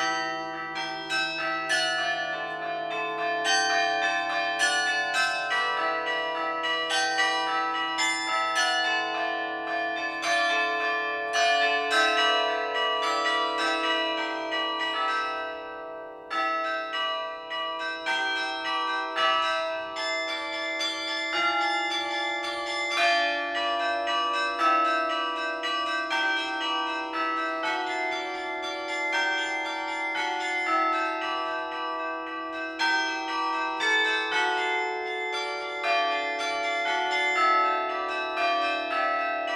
Les Pinces, Pl. de la République, Tourcoing, France - Église St-Christophe - Tourcoing - Carillon
Église St-Christophe - Tourcoing
Carillon
Maitre carillonneur : Mr Michel Goddefroy